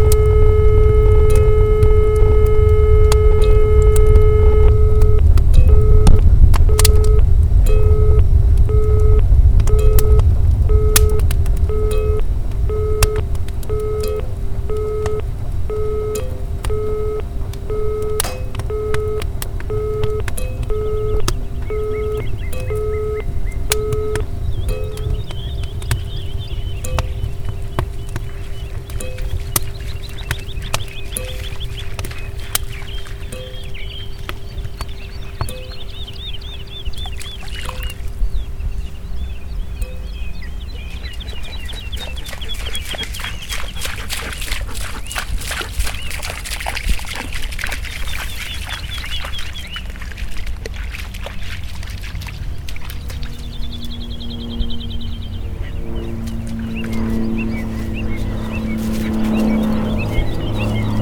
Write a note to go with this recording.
Phonography composition which describes Polish (Mazovia Province) rural soundscape.